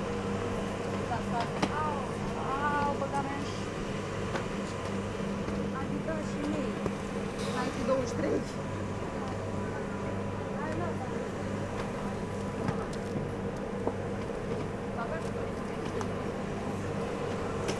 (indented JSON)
{
  "title": "Malmö, Sweden - Malmö station",
  "date": "2019-04-17 18:00:00",
  "description": "Waiting for the Öresund train in the Malmö station, and taking the train to Copenhagen.",
  "latitude": "55.61",
  "longitude": "13.00",
  "altitude": "3",
  "timezone": "Europe/Stockholm"
}